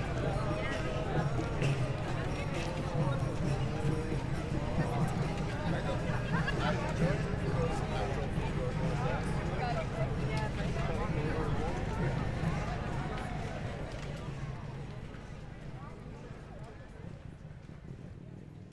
A group of krishnaites marching and chanting their regular Hare Krishna chant accompanied by drums and percussion. I walk a circle around the gathering before they march away towards Vilnius street. Recorded with ZOOM H5.
Kauno apskritis, Lietuva, 21 March 2020, 15:00